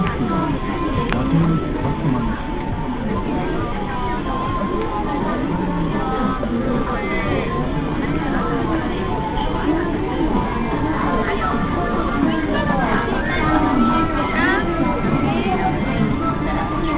entrance at yodabashi camera at 6 p.m 17.12.2007
Shinjuku, ３丁目２６−７